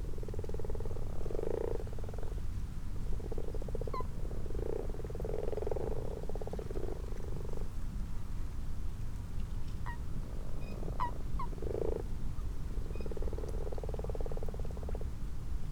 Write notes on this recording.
common frogs and common toads ... xlr sass to zoom h5 ... time edited unattended extended recording ... bird call ... distant tawny owl ...